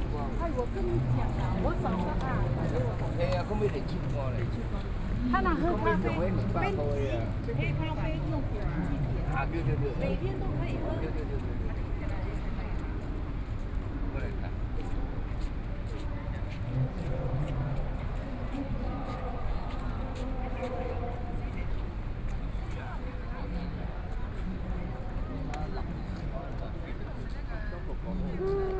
碧湖公園, Taipei City - walking in the Park
walking in the Park, Aircraft flying through, Many people are walking and jogging
Neihu District, Taipei City, Taiwan, 5 May 2014